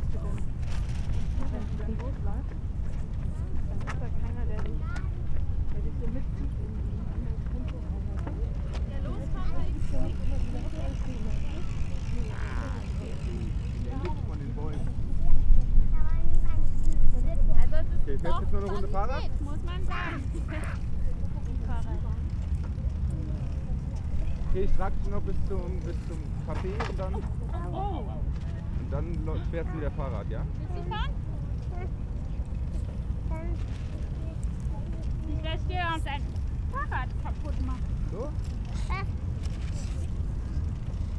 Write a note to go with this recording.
warm late october sunday, ambience at former tempelhof airport. people enjoy the huge empty space within the city area. bikes, surfer, kites, pedestrians. recorded at high levels, to catch the various deep drones in the air at this place.